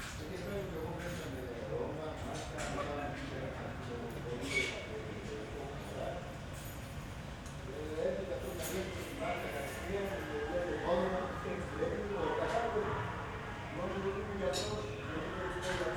Berlin Bürknerstr., backyard window - voices
Sunday, voices in the backyard, wind.